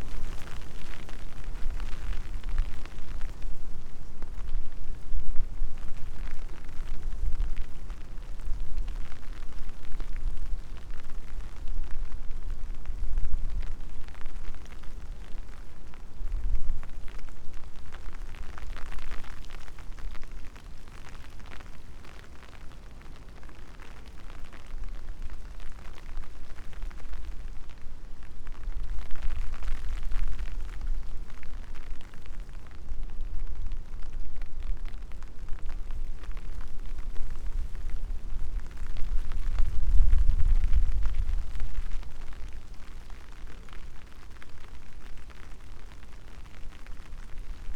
path of seasons, Piramida, Maribor - dry leaves, umbrella, soft rain, wind
Maribor, Slovenia